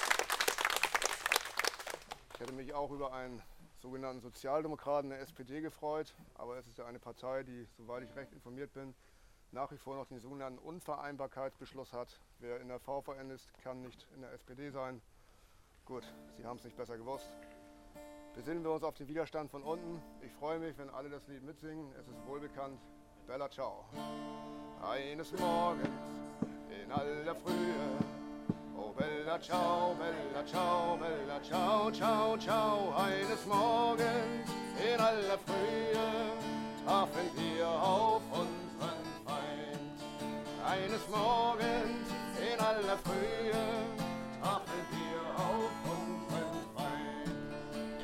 Michael Kühl, Mitglied der VVN-BdA, spielt Bella Ciao